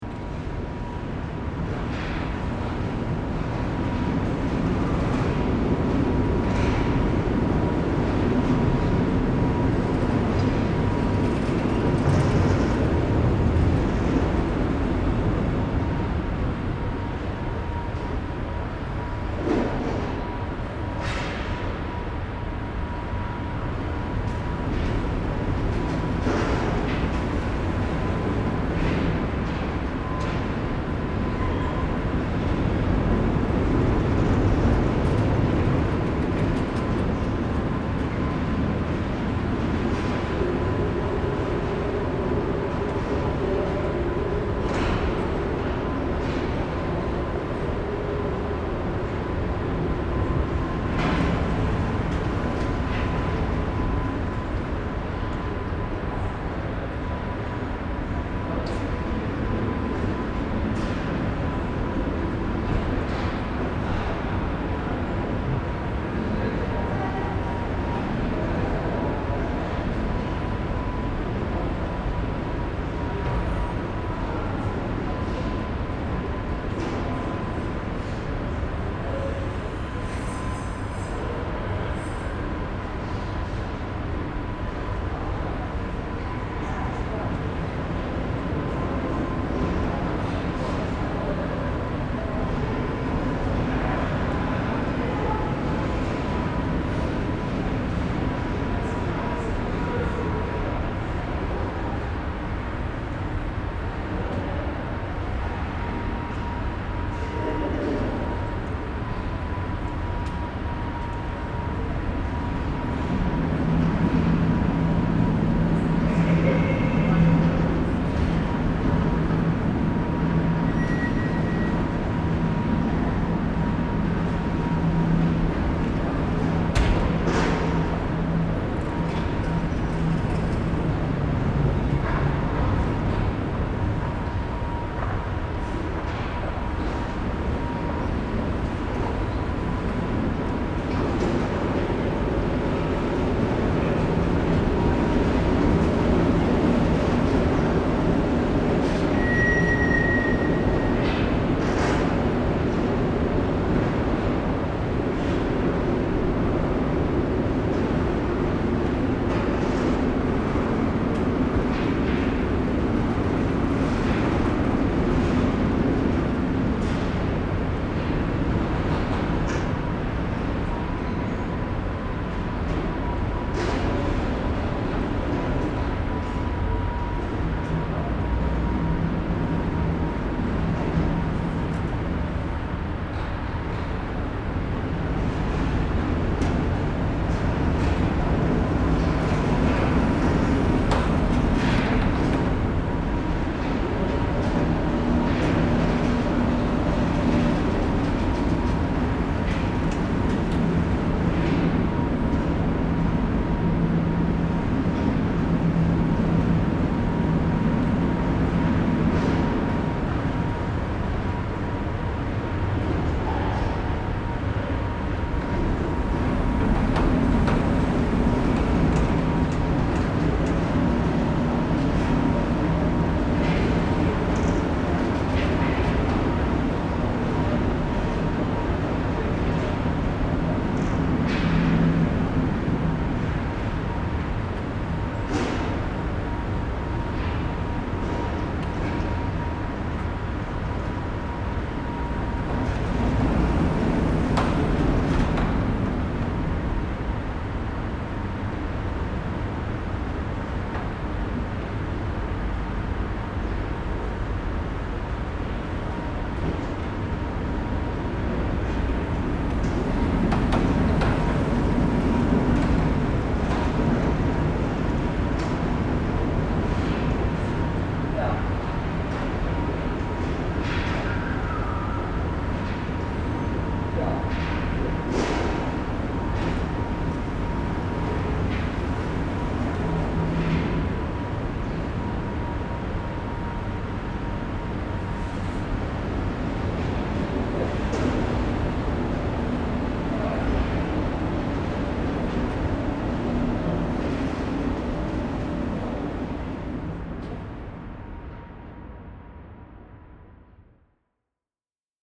Unterbilk, Düsseldorf, Deutschland - Düsseldorf. Stadttor, elevators
Inside the Stadttor building. A huge and high glas architecture with an open centre. The sounds of elevators moving up and down in the open construction.
This recording is part of the intermedia sound art exhibition project - sonic states
soundmap nrw -topographic field recordings, social ambiences and art places